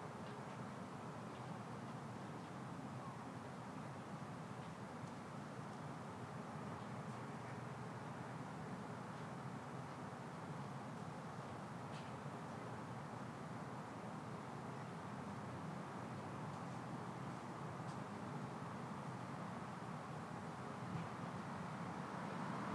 Denson Dr, Austin, TX, USA - Automobiles, Fence Construction
Recorded on my Zoom H4N.
Foreground is car traffic on a lazy Saturday, along with a fence being constructed nearby. Some emergency vehicles in the distance.
Texas, United States of America, 15 February 2020, 13:30